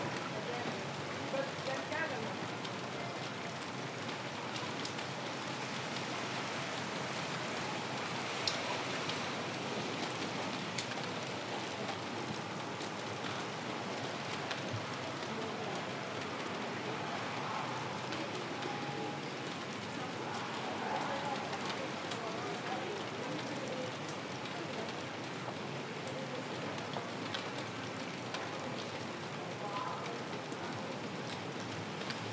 Nida, Lithuania - Art Colony Rooftop
Recordist: Ribbet Malone
Description: On the rooftop of the Nida Art Colony. Rain drops, far away construction sounds, cars in the distance and people passing under the bridge. Recorded with ZOOM H2N Handy Recorder.
August 2016